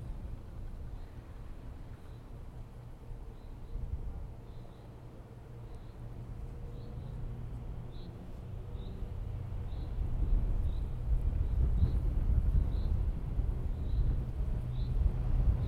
Vallarsa TN, Italia - Passo Pian delle Fugazze
passaggi di mezzi (traffico di motociclette) sulla sommità del passo